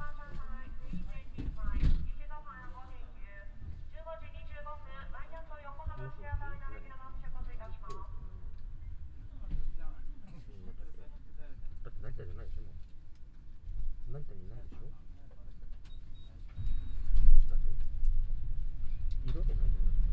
tokyo, airport, bus transit
Inside the airport transit bus. First bus sound while driving, then a stop ans some announcement outside and then another announcement while driving again.
international city scapes - topographic field recordings and social ambiences